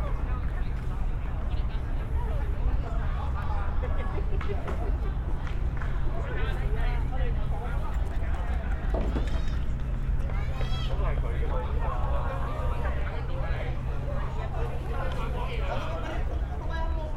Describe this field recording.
This is a binaural recording. I am walking along the south-west exit of the "open space" in Kennedy Town. There are many people milling about, sitting around the benches, walking along the promenade. You can also hear briefly the waves crashing against the dock next to the promenade. It's a little chaotic, although on this night it was perhaps less crowded than usual. You can also hear a couple arguing in Cantonese.